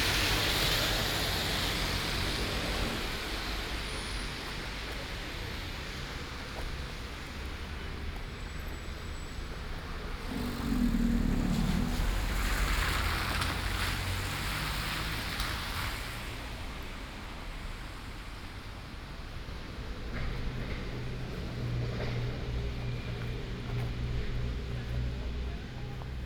Ascolto il tuo cuore, città. I listen to your heart, city. Several chapters **SCROLL DOWN FOR ALL RECORDINGS** - Evening return home with break in the time of COVID19 Soundwalk
"Evening return home with break in the time of COVID19" Soundwalk
Chapter CIX of Ascolto il tuo cuore, città. I listen to your heart, city
Thursday, June 18th 2020. Back San Salvario district, through Porta Susa and Porta Nuova railway station one one hundred days after (but day forty-six of Phase II and day thirty-three of Phase IIB and day twenty-seven of Phase IIC and day 4st of Phase III) of emergency disposition due to the epidemic of COVID19.
Start at 11:03 p.m. end at 11:58 p.m. duration of recording 55’37”
As binaural recording is suggested headphones listening.
Both paths are associated with synchronized GPS track recorded in the (kmz, kml, gpx) files downloadable here:
Go to similar path n.47 “"Morning AR with break in the time of COVID19" Soundwalk
Piemonte, Italia, 18 June, 23:03